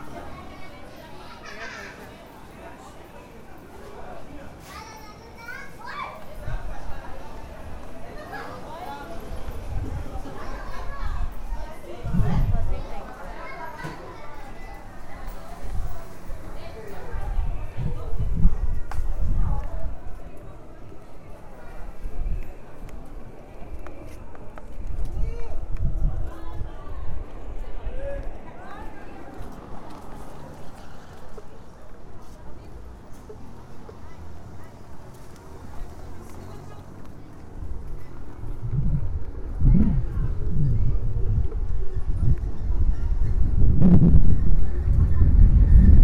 Cachoeira, BA, Brasil - Caminhada pela Antonio Carlos Magalhães
Caminhada a partir da Rua da Feira, até o Cahl. Trabalho realizado para a Disciplina de sonorização I, Marina Mapurunga, UFRB.
Anna Paiva
Bahia, Brazil, 28 March